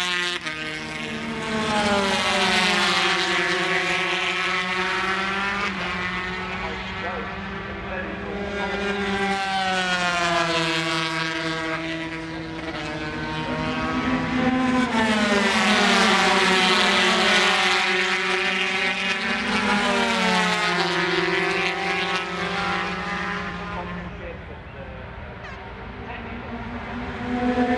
Castle Donington, UK - British Motorcycle Grand Prix 2003 ... 125 ...
125cc motorcycle warm up ... Starkeys ... Donington Park ... warm up and associated noise ...